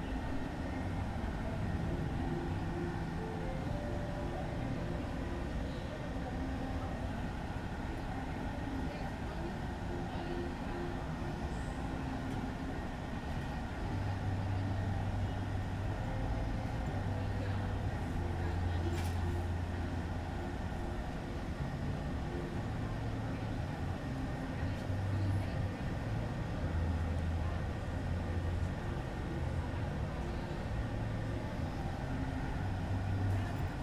Cra., Medellín, Belén, Medellín, Antioquia, Colombia - Parque Perros
Se escuchan murmullos de personas, hay diferentes sonidos de perros, a lo lejos y de cerca, se escuchan tambien cadenas y el trotar de los animales, también se escucha el ruido de un motor y del aire. Se siente tambien una música a lo lejos. Tambien se escucha un avión a lo lejos.
1 September 2022